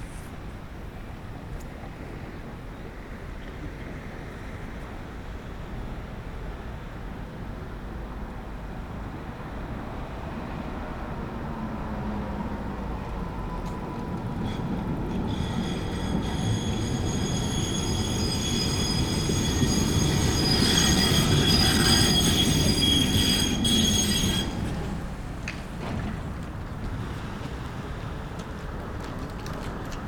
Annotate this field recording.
Menschen, Autos, Straßenbahnendhaltestelle, etc. Aufgenommen am 12.2.2018 am späten Nachmittag. Aufnahme bei einem Soundwalk im Rahmen eines Workshops zu Klangökologie. Mit Beeke, Greta und Selma. ZoomH4n + RødeNT5